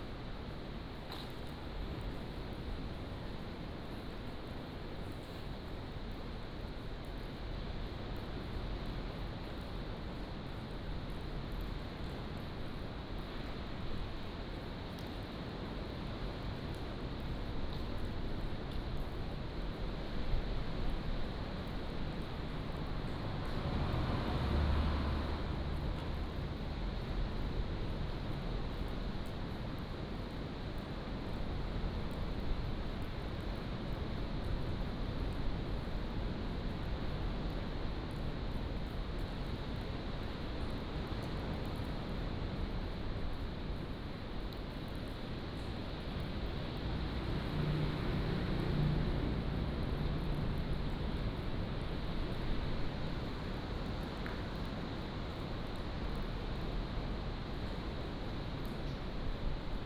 Inside the cave, Sound of the waves, Aboriginal rally venue
Lanyu Township, Taitung County, Taiwan, 29 October 2014, 10:03